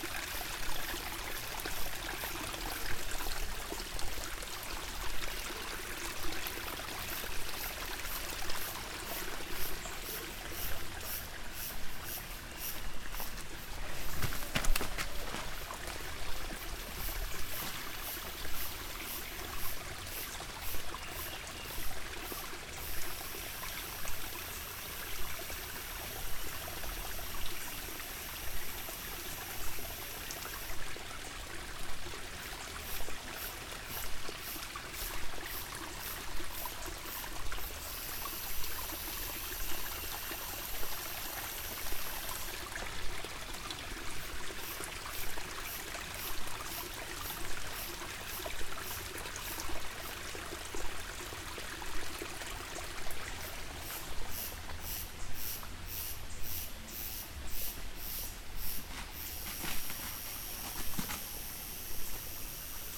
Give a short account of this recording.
Paseo por los alrededores de la casa, Grillos, Cigarras y campanas